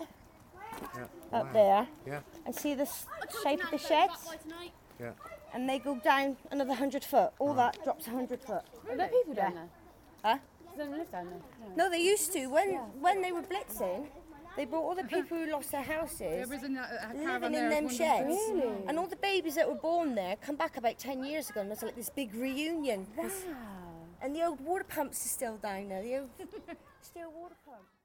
Efford Walk One: More on Efford Fort - More on Efford Fort